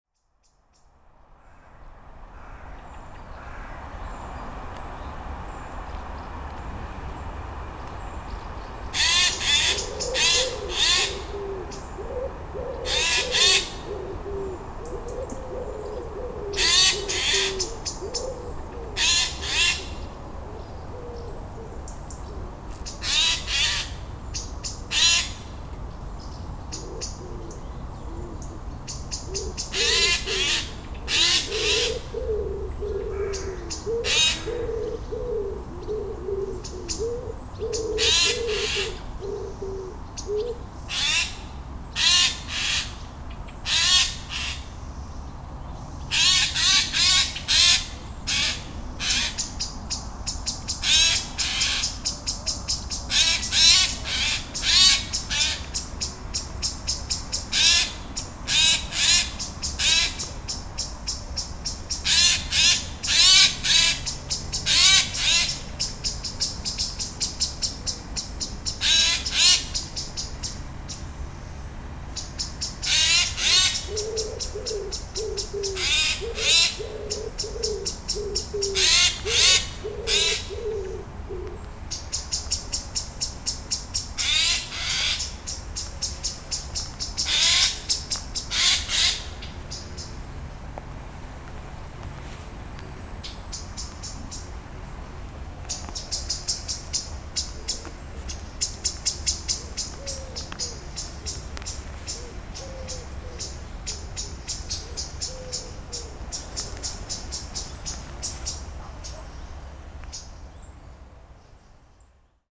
Maintenon, France - The jay
A jay fighting with a blackbird, in a rural landscape.
29 July 2016, ~08:00